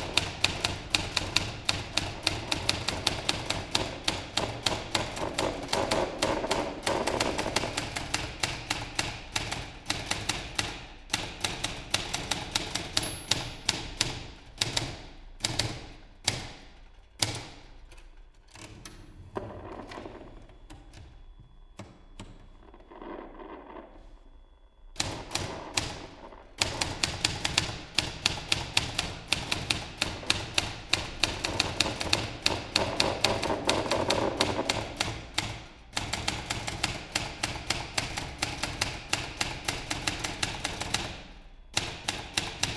desk, mladinska, maribor - typewriter and radio
writing ”the future” text, few minutes fragment ...